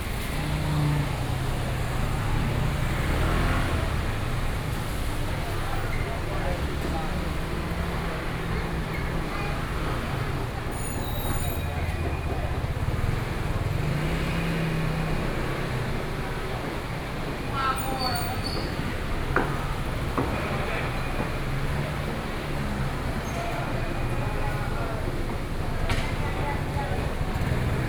花蓮市果菜市場, Hualien County - Fruit and vegetable market
walking in the Fruit and vegetable market, Traffic Sound, Chat
Binaural recordings